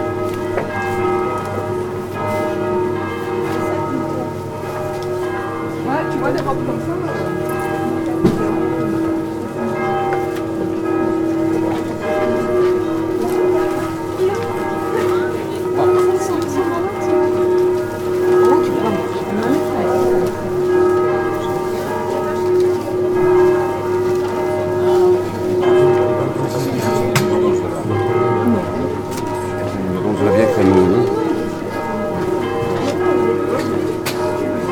l'isle sur la sorgue, rue carnot, market and church bells
At the weekly market. The sound of the noon bells of the nearby old church and the market scene in one of the villages narrow roads.
international village scapes - topographic field recordings and social ambiences